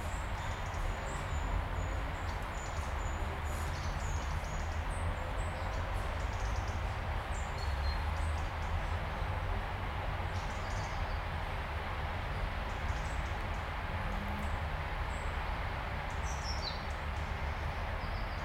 вулиця Олени Теліги, Київ, Украина - Voices birds & noises street in Kiev
Пение птиц, шум улицы
Kyiv, Ukraine, 5 September 2018, ~11:00